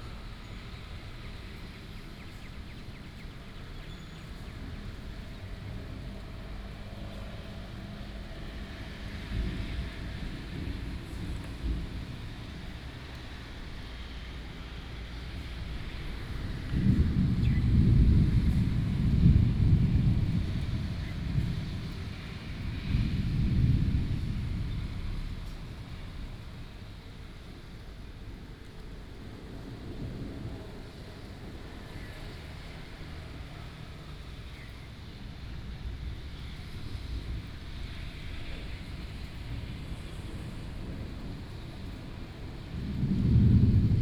Rende 2nd Rd., Bade Dist., Taoyuan City - Thunderstorms
This month is almost thunderstorms every afternoon, birds sound, Thunderstorms, Traffic sound